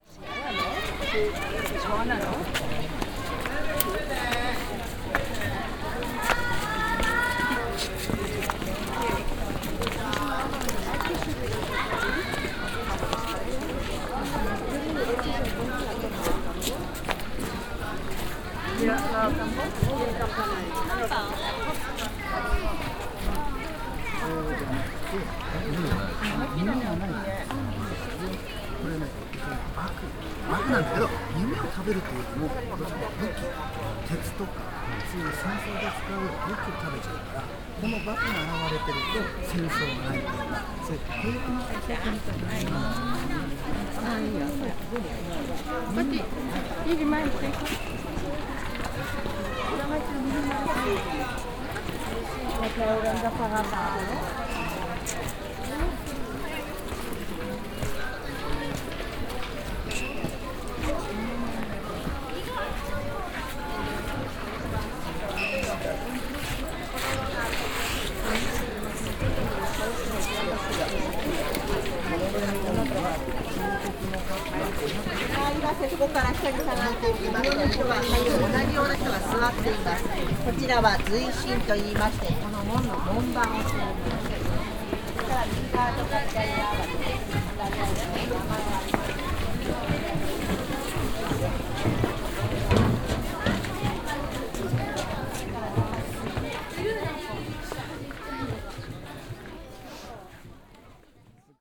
nikkō, tōshō-gu shrine, plateau
inside the shrine area on the first plateau - school classes taking group photographs - visitors talking and taking photographs of the location
international city scapes and topographic field recordings
20 August 2010